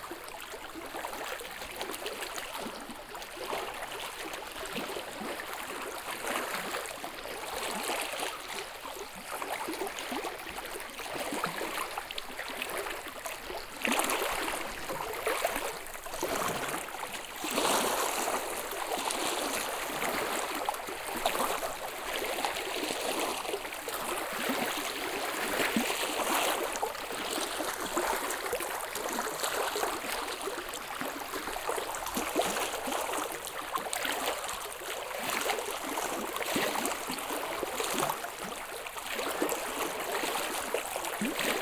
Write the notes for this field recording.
when i arrived in Sinazongwe in June, water levels of the lake were still very high... also the soundscapes at the lake were very different from what i had experienced in August 2016...